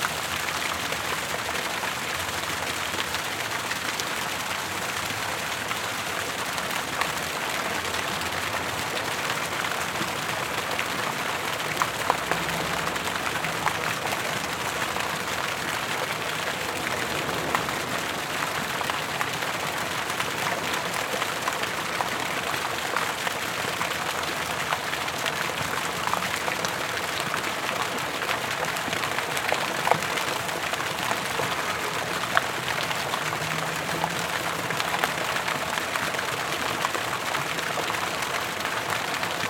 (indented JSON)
{
  "title": "Ave, New York, NY, USA - The Fountain Beauty, NYPL",
  "date": "2022-04-01 18:10:00",
  "description": "Sounds from the fountain Beauty at the New York Public Library entrance.",
  "latitude": "40.75",
  "longitude": "-73.98",
  "altitude": "62",
  "timezone": "America/New_York"
}